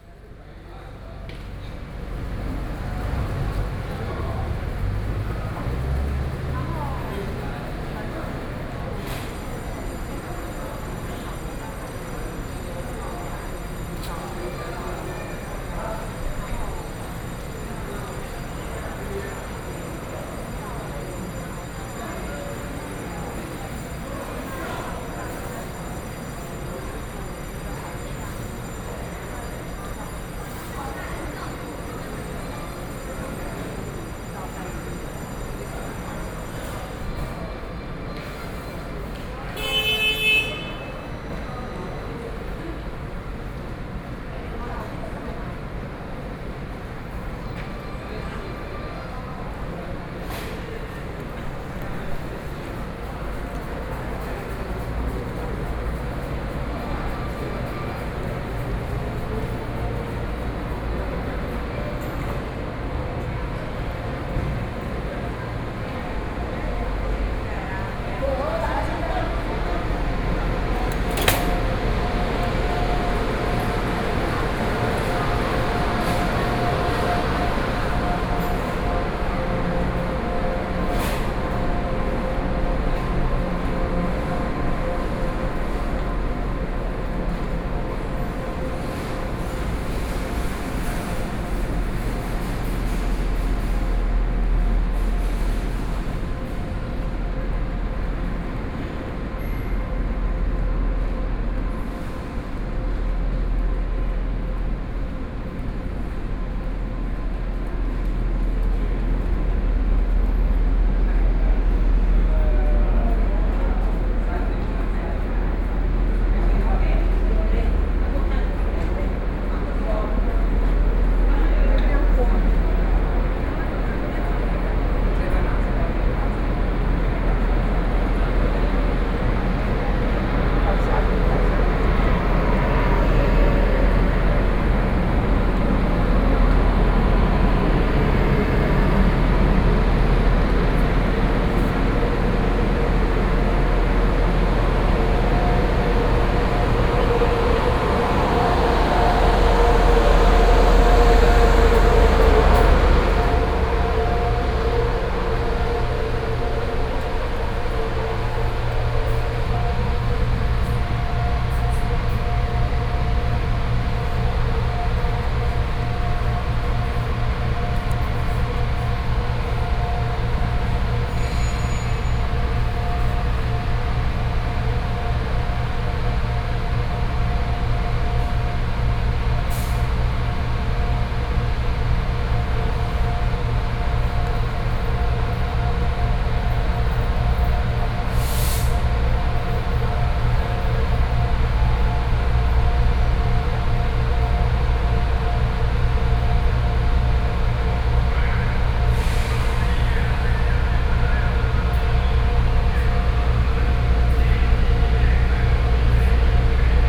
Changhua Station, Taiwan - station
From station hall to station platform, Zoom H4n+ Soundman OKM II
Changhua County, Taiwan, 8 October, 15:16